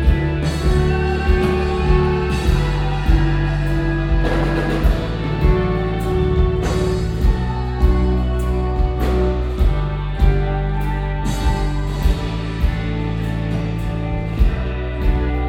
moers, moers festival, tent atmo and announcement - moers, moers festival, fred frith - cosa brava and final applaus
soundmap nrw - social ambiences and topographic field recordings
Moers, Germany, 4 June 2010, 11:34